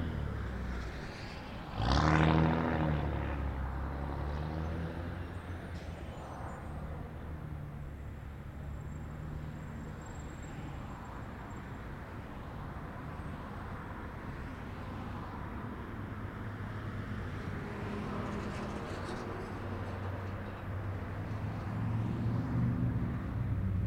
W Cordova Rd, Santa Fe, NM, USA - motorcycle comes to busy intersection
An active intersection of St Francis Dr.(HWY285) and Cordova. A motorcycle comes to intersection and speeds away. Recorded with ZoomH4 and two Electro-Voice 635A/B Dynamic Omni-Directional mics.
21 June 2018